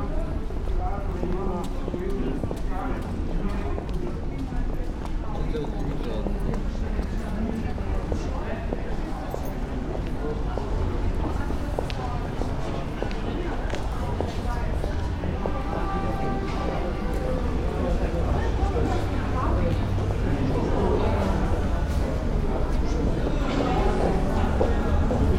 train station, Ljubljana, Slovenia - rain drops keep falling ...
walk through the underpass, open and closed above, storm approaching, at the and with free impro of the song rain drops keep falling on my head and brakes beautifully squeaking as refrain